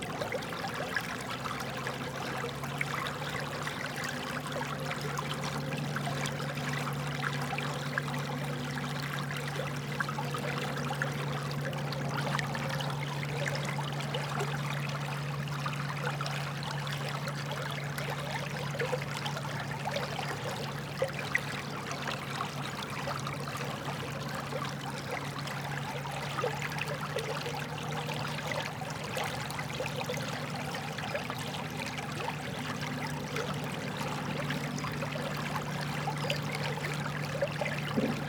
river drava, dvorjane - river flux, waves with foamy tops
Spodnji Duplek, Slovenia